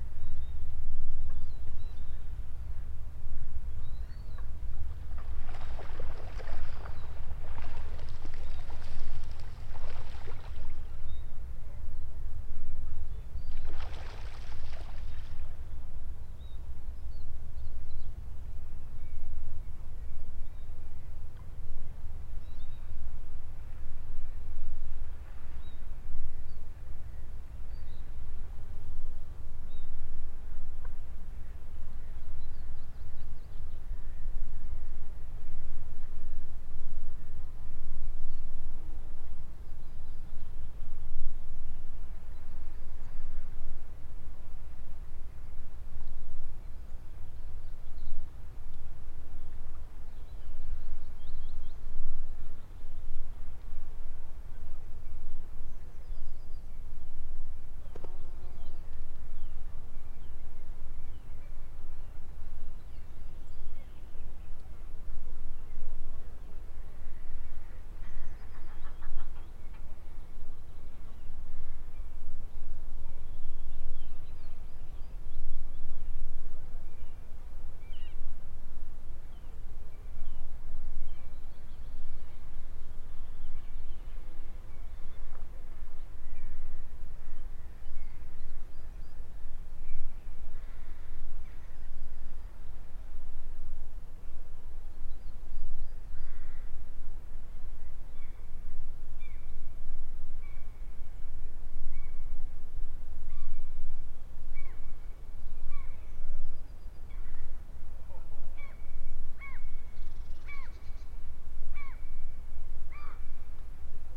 Strömbäck Kont nature reserve. Lake scene.
Nesting gulls. Birds washing. Sea and a plane is keynote in background. Talking in background. Group kaving Fika. Rode NT4